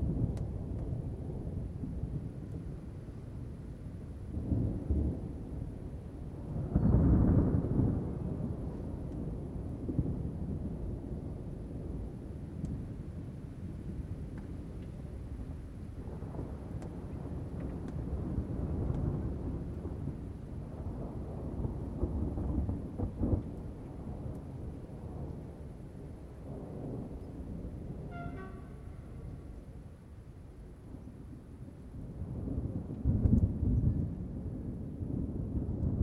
Rue Claude Delaroa, Saint-Étienne, France - St-Etienne - orage d'été
St-Etienne (Loire)
Orage d'été - soir
27 July, ~22:00